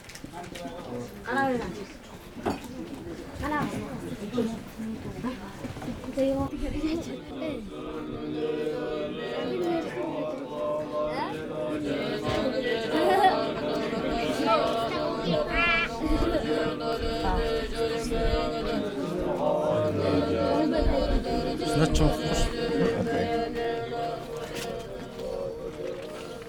Erdene Zuu, Harhorin, Mongolië - Monks chanting at old temple in Korokum
Monks chanting at old temple in Korokum